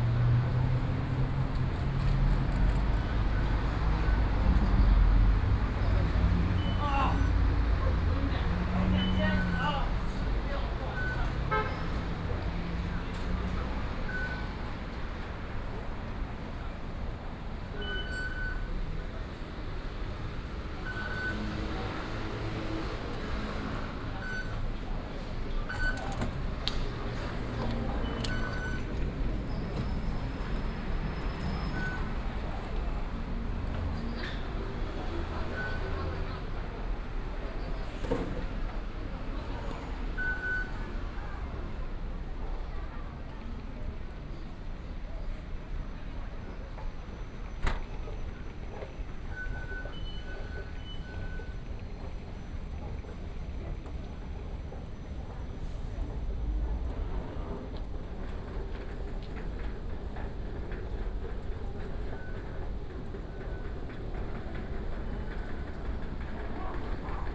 大安站, 信義路四段, Taipei City - walking into the MRT station
Away from the main road, into the MRT station